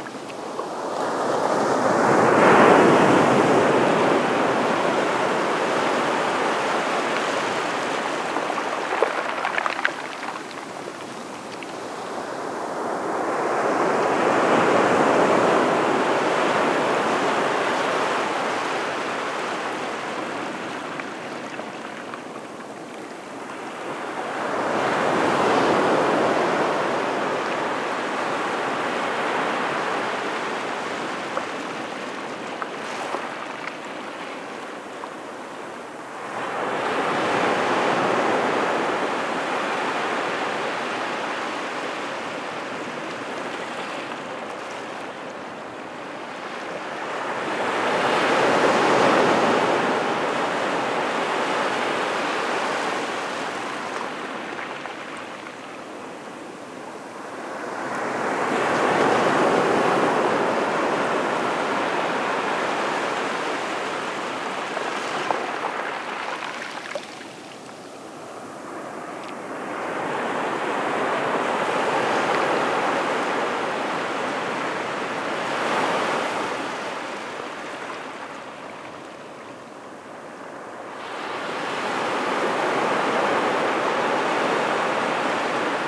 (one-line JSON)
{"title": "La Faute-sur-Mer, France - The sea", "date": "2018-05-23 17:15:00", "description": "Recording of the sea during high tide, with shells rolling into the waves.", "latitude": "46.33", "longitude": "-1.33", "timezone": "Europe/Paris"}